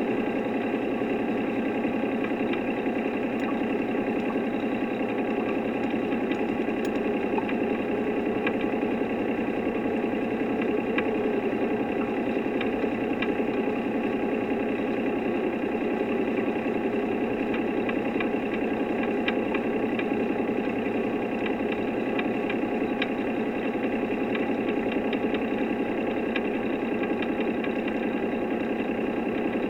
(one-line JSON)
{"title": "sloter meer: boat ride - the city, the country & me: boat ride on the sloter meer", "date": "2012-07-28 15:48:00", "description": "contact mic on hull\nthe city, the country & me: july 28, 2012", "latitude": "52.91", "longitude": "5.63", "altitude": "254", "timezone": "Europe/Amsterdam"}